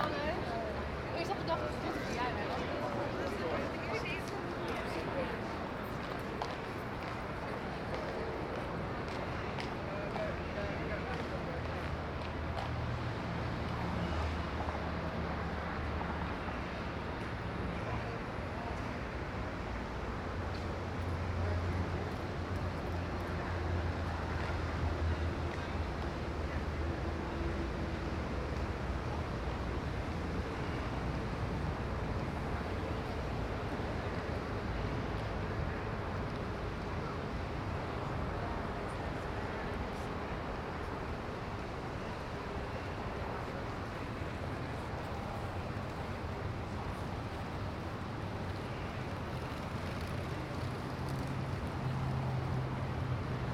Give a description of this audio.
Zuidplein, a "public" plaza in the Zuidas business district of Amsterdam. voices, birds, distant traffic. Binaural recording